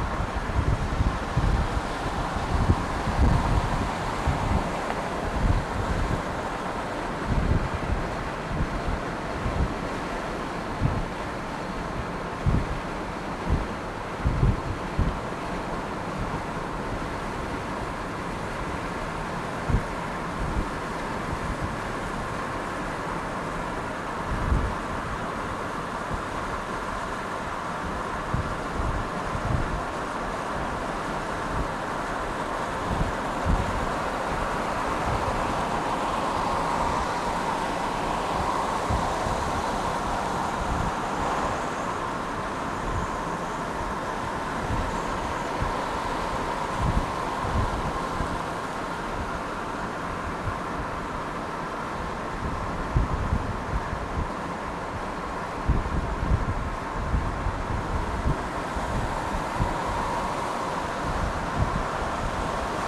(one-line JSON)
{"title": "Sherwood Forest - Commute", "date": "1999-09-13 07:20:00", "description": "We do it every day until it seems we can do it in our sleep. Yet propelling 3,200 lbs of steel down the road at 60 mph is hardly something you'd want the people around you to be doing in their sleep.\nOf all the soundscapes I've made, this one is a bit of a cheat. I found that a single commute on an average day is very, very boring (and thank goodness for that). The vast majority of cars on the road today are remarkably quiet and nondescript. It is the rare dumptruck or Harley that is even distinguishable, and they're usually hurtling past you in the next lane rather than waiting patiently to be recorded. So this soundscape was assembled from several trips, with windows up and windows down, on the highways and byways, morning and evening commutes. Several hours of raw tape was edited down to just 27 minutes of \"highlights.\"\nAnd I still didn't capture a single good crash.\nMajor elements:\n* Getting into my truck (Mazda B-2200, 1989, red)\n* Opening the garage door", "latitude": "47.79", "longitude": "-122.37", "altitude": "106", "timezone": "America/Los_Angeles"}